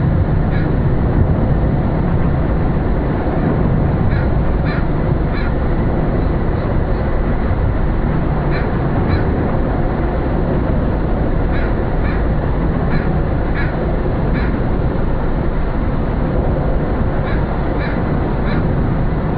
vancouver, under lions bridge
under the bridge, traffic passing an resonating in the construction, seagulls and a distant water plane
soundmap international
social ambiences/ listen to the people - in & outdoor nearfield recordings